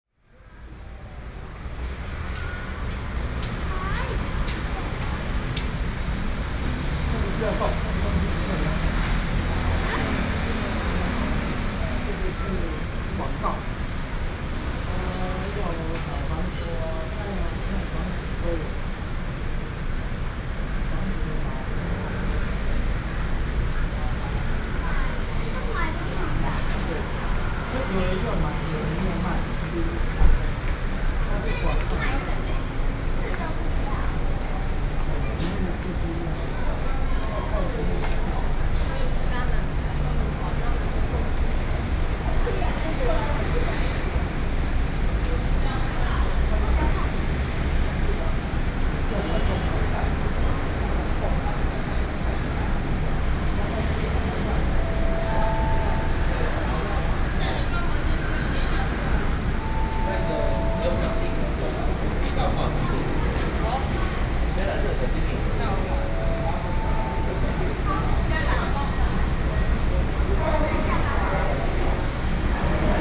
Yonghe District, New Taipei City - Father and child conversation
Father and child conversation, Sony PCM D50 + Soundman OKM II
台北市 (Taipei City), 中華民國, 29 September